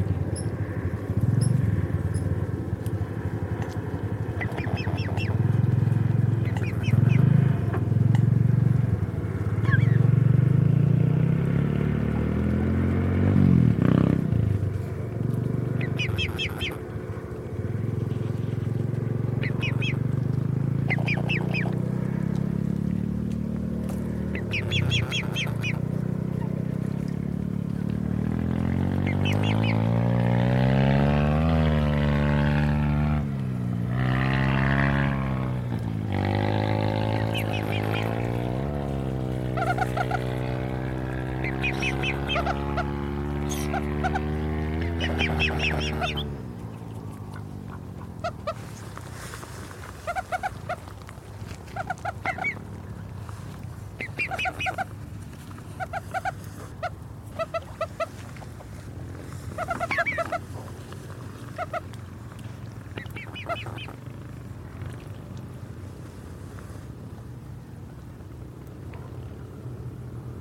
Lodmoor bird reserve - with some boy racers at the beginning.